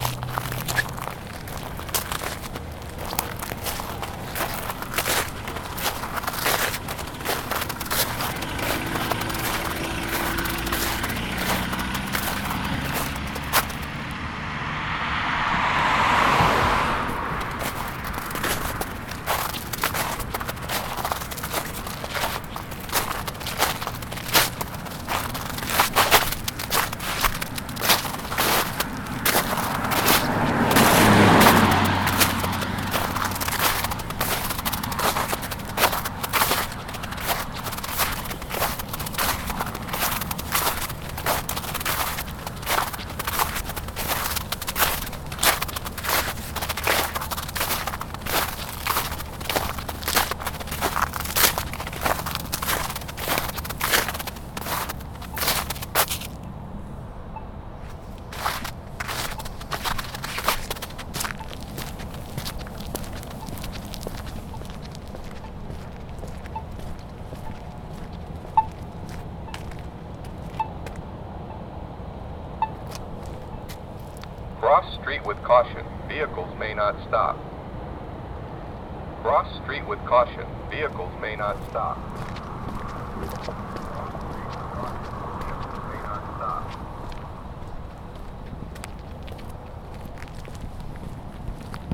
Muhlenberg College Hillel, West Chew Street, Allentown, PA, USA - Walking through snow on Chew Street
A peaceful walk through the snow late at night. The streets are quiet and the only sound to be heard is the crunching of the snow and the automated crossing guard warning pedestrians of the traffic.